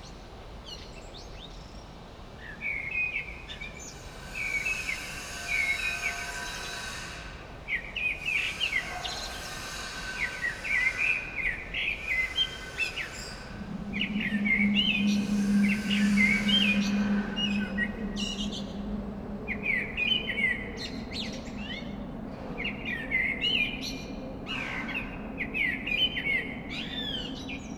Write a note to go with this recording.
Köln, Belgisches Viertel, blackbird in the backyard, quite eclectic and multifacetted song, along with a saw, and air ventilation, (Sony PCM D50)